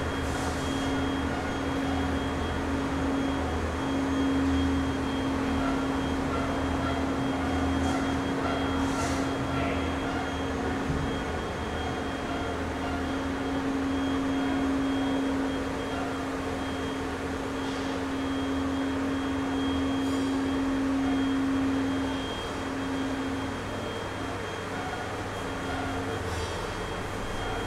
eisenhüttenstadt - friedrich-wolf-theater: renovierungsarbeiten
21 September 2009